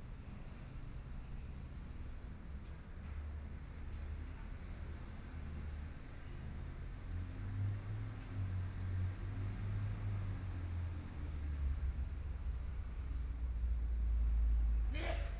Sec., Zhongshan N. Rd., Zhongshan Dist. - in the Park
in the Park, Binaural recordings, Zoom H4n+ Soundman OKM II
6 February 2014, 13:05